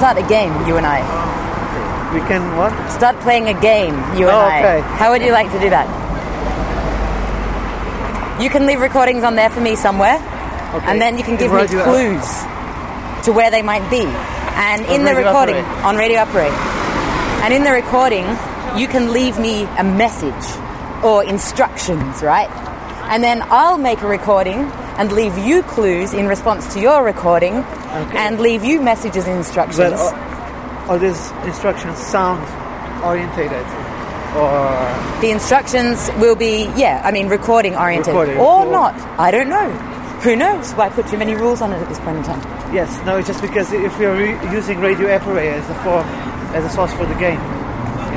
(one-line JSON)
{"title": "walking to the hill...plotting games and experiments", "description": "bow tie goodbye", "latitude": "55.95", "longitude": "-3.18", "altitude": "77", "timezone": "Europe/Berlin"}